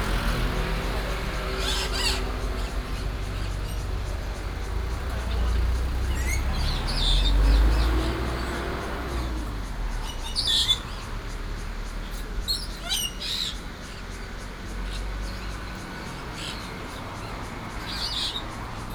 Changsha St., Taoyuan Dist. - Parrot shop
Parrot shop, In the shop selling parrots, Cicadas, Traffic sound